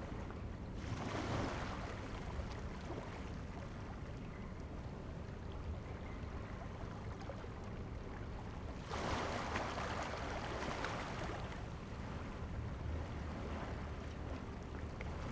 成功漁港, Chenggong Township - the waves
Standing on the quayside, Sound of the waves, The weather is very hot
Zoom H2n MS +XY